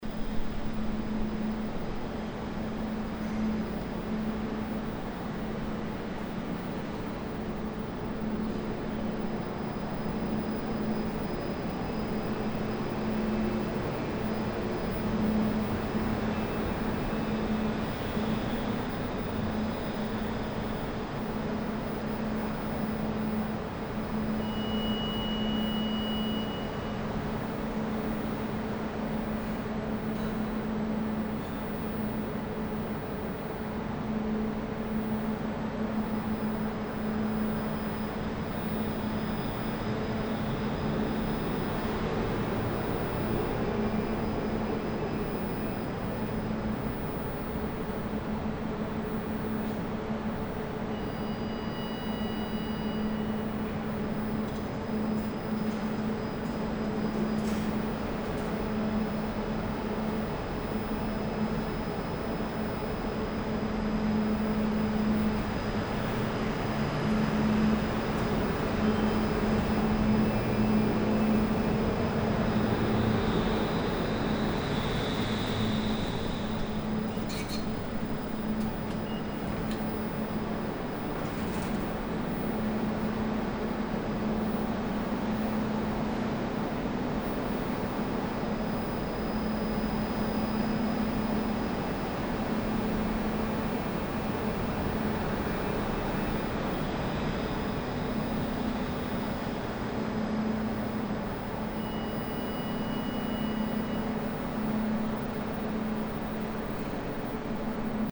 at the entrance of the station, tickets-printing machines, minimetrò passing nearby, people going inside the station.
Perugia, Italy, 23 May 2014, ~14:00